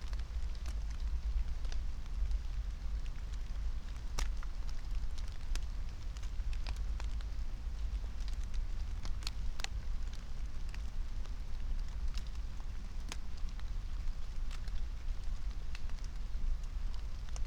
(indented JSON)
{
  "date": "2021-04-17 22:09:00",
  "description": "22:09 Berlin, Königsheide, Teich - pond ambience",
  "latitude": "52.45",
  "longitude": "13.49",
  "altitude": "38",
  "timezone": "Europe/Berlin"
}